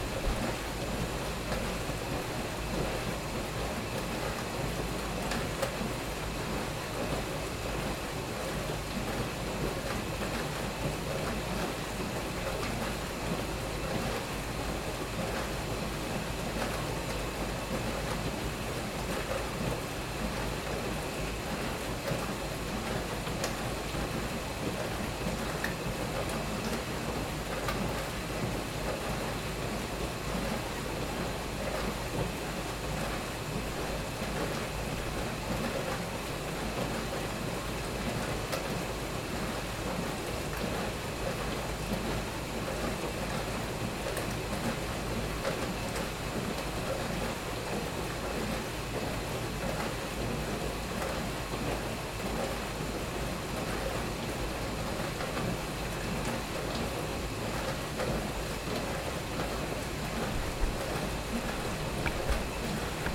425 Highway 1 W - Dishwasher
This is a recording of a dishwasher running.